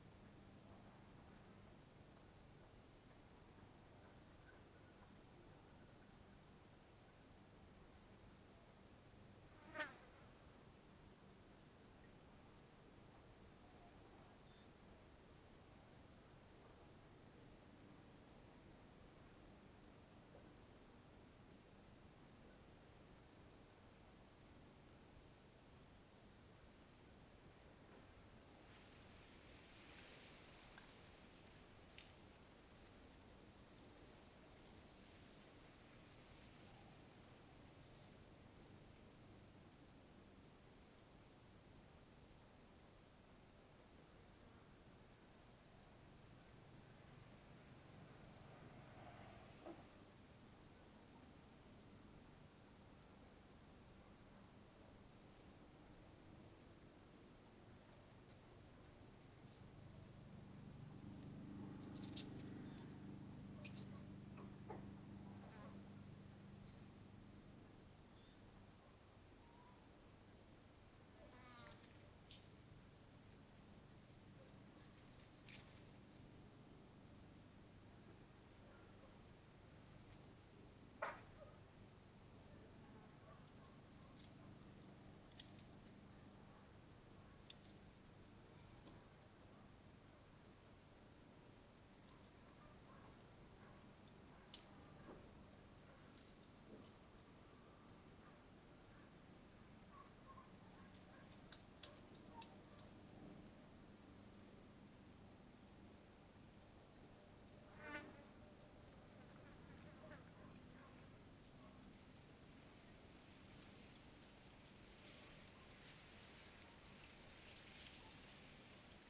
Frontera, Santa Cruz de Tenerife, España - Entre el cielo y la tierra
El Sitio is a rural and friendly accomodation with different small houses in a mountainside where I stay for few days in my fist visit to El Hierro. There i have a great time, a great view of El Golfo and a great sounscape that makes me feel like in sky… Birds, dogs barks, distant motorcycles, flys, dry leaves dragin along the ground… and the bells from a near church... Total relax.